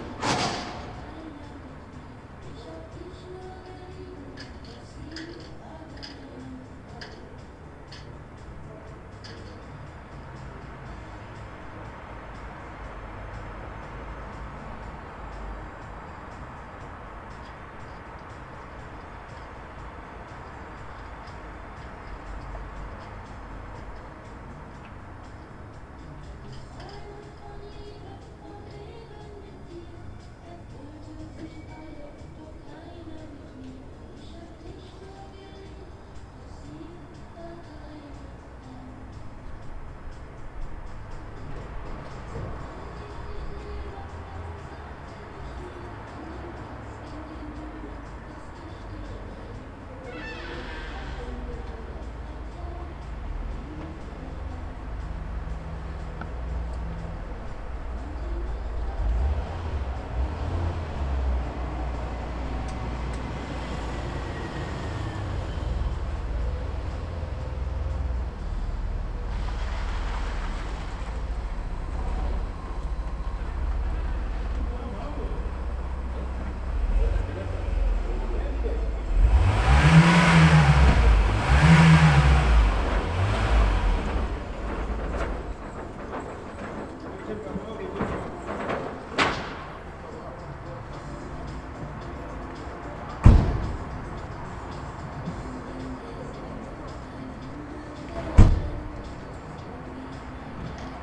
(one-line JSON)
{"title": "sanderstraße: bürgersteig vor gaststätte - the city, the country & me: in front of a berlin old school pub", "date": "2009-01-30 15:38:00", "description": "kurz nach mitternacht, gaststätte bereits geschlossen, durch die heruntergelassenen rolläden ist schlagermusik zu hören, eine familie trifft vor gaststätte mit pkw ein\nshort after midnight, the pub called \"mittelpunkt\" is closed, music sounds through the closed blinds and a familiy arrives in front of the pub by car\nthe city, the country & me: december 31, 2009", "latitude": "52.49", "longitude": "13.42", "altitude": "45", "timezone": "Europe/Berlin"}